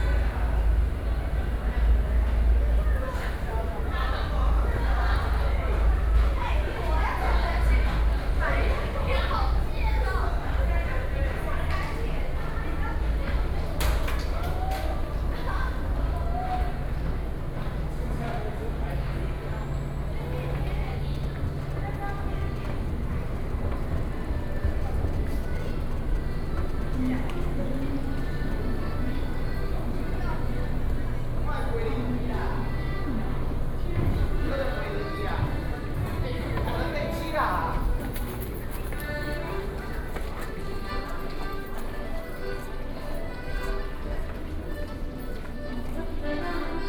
Taipei, Taiwan - Walking into the MRT
Walking into the MRT, Sony PCM D50 + Soundman OKM II
Taipei City, Taiwan, 24 May